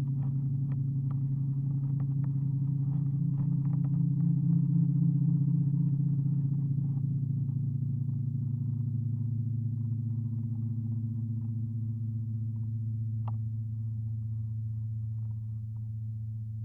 Curonian Spit, Lithuania, resonances in dunes
contact microphones on long paracord string. almost no wind in presence
21 May, ~11:00